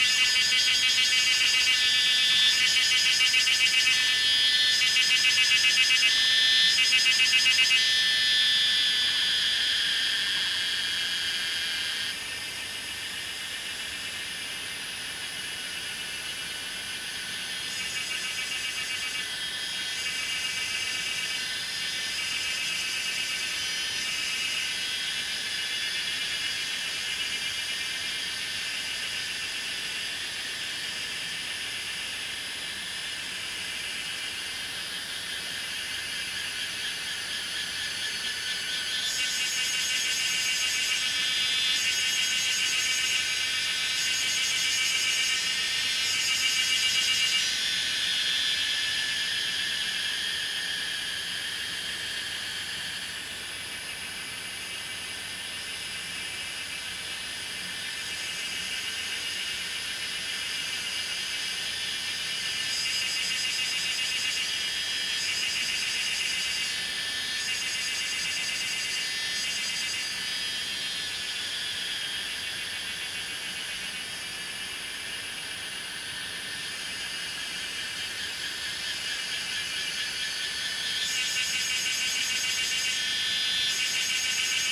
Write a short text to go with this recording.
Cicada and Bird sounds, Zoom H2n MS+XY